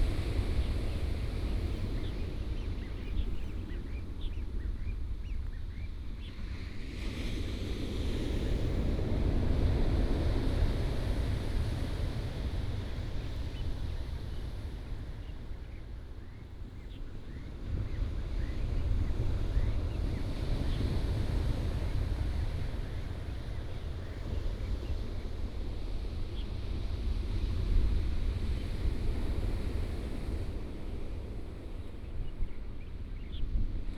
Morning on the coast, Sound of the waves, Bird call
Binaural recordings, Sony PCM D100+ Soundman OKM II